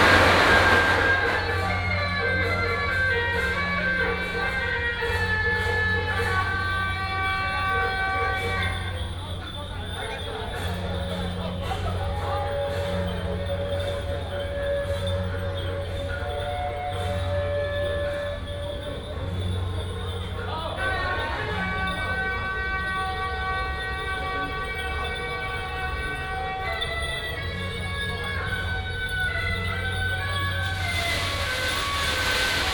迪化街一段307巷, Taipei City - temple festivals
Traditional temple festivals, “Din Tao”ßLeader of the parade